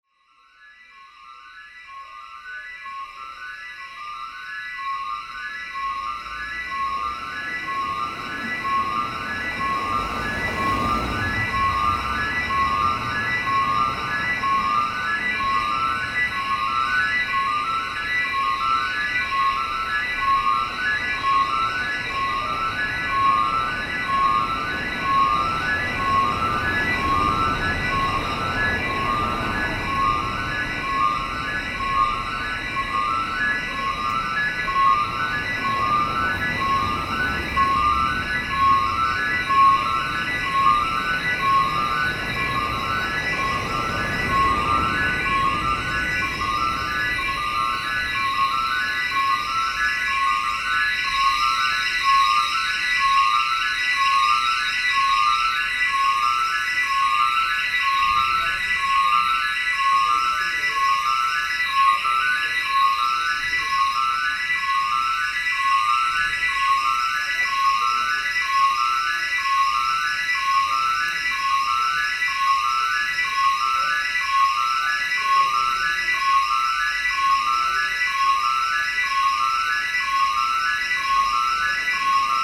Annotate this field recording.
Some junkies had a drink into a building, where they were uninvited. They made the alarm ring. Nobody cares.